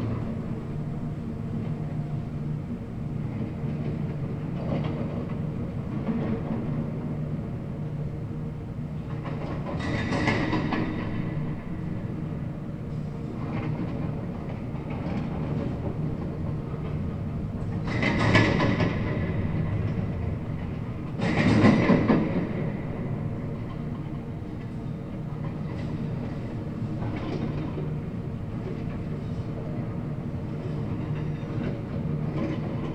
Gesundbrunnen, Berlin, Germany - bagger, digger
ein bagger beim planieren auf einer baustelle, an excavator levelling ground on a construction site